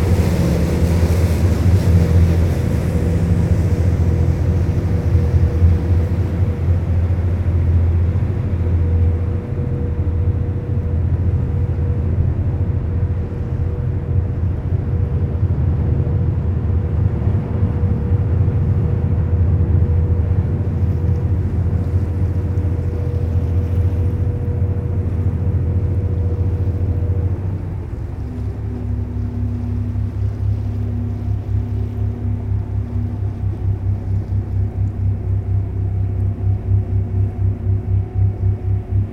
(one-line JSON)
{"title": "Amsterdam, Nederlands - Central station Ferry", "date": "2019-03-28 16:15:00", "description": "Het Ij, Veer centraal station. Crossing the river using the ferry.", "latitude": "52.38", "longitude": "4.90", "altitude": "1", "timezone": "Europe/Amsterdam"}